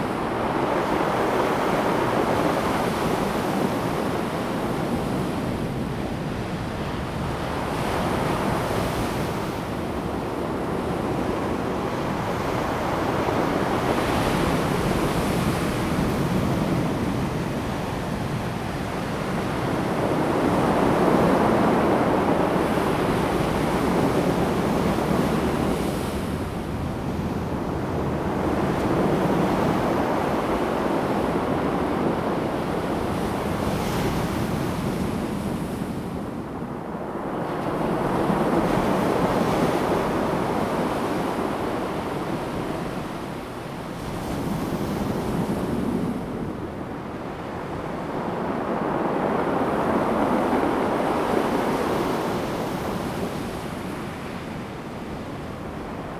Waves, Rolling stones
Zoom H2n MS+XY